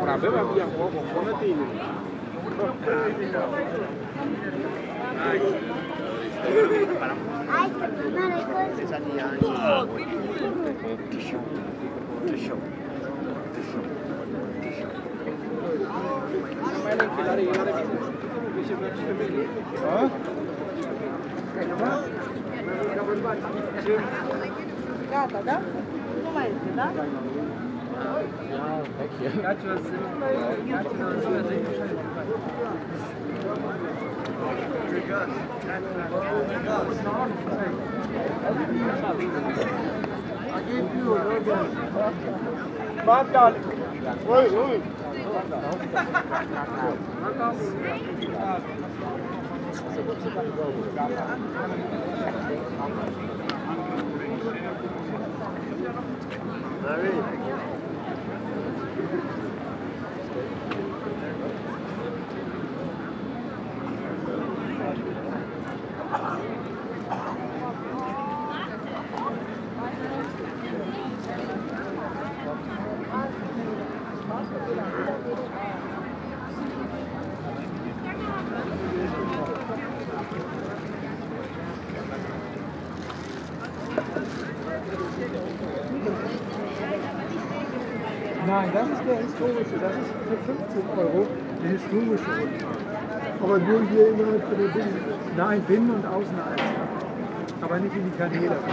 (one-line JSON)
{"title": "Hamburg, Deutschland - Tourists drinking", "date": "2019-04-19 15:00:00", "description": "Binnenalster, Alsterpavillon. The Außenalster river is like a big lake. It’s extremely traveled by tourists. This is a short walk in the middle of a devastating mass tourism. Huge amounts of tourists drinking, people in the bars, regular calls from tourist boats.", "latitude": "53.55", "longitude": "9.99", "altitude": "4", "timezone": "Europe/Berlin"}